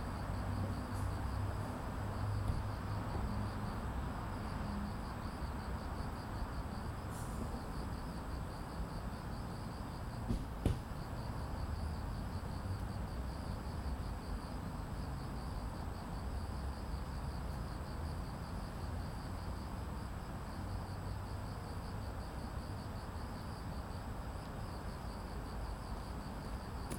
Banpo Jugong Apartment, Cricket, Street
반포주공1단지, 저녁, 풀벌레, 자동차